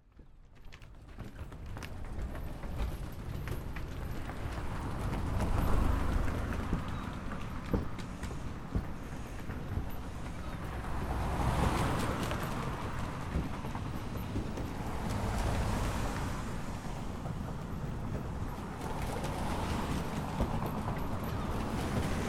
Hammersmith Bridge, London - Cars Passing Over Hammersmith Bridge
Cars Passing Over Hammersmith Bridge recorded with Zoom H5 built-in stereo mics.